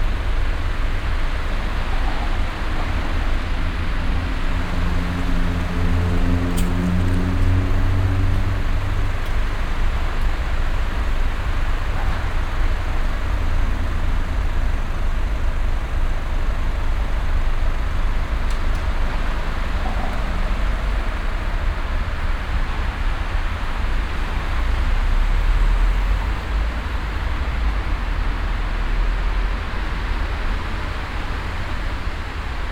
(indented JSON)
{
  "title": "cologne, im sionstal, nearbye bridge",
  "date": "2009-06-25 13:30:00",
  "description": "soundmap nrw: social ambiences/ listen to the people in & outdoor topographic field recordings",
  "latitude": "50.93",
  "longitude": "6.96",
  "altitude": "52",
  "timezone": "Europe/Berlin"
}